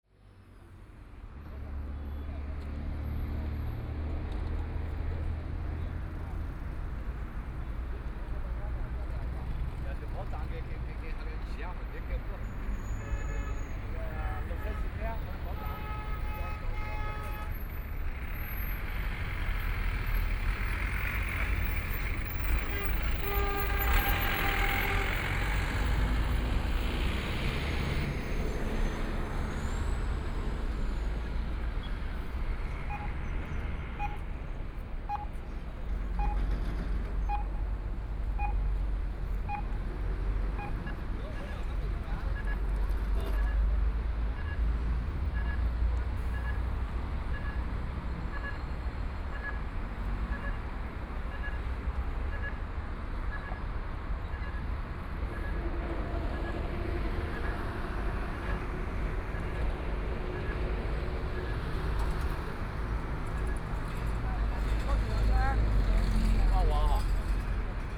Dongcheng Rd., Pudong New Area - At intersection
Traffic signal sounds, Binaural recording, Zoom H6+ Soundman OKM II
Shanghai, China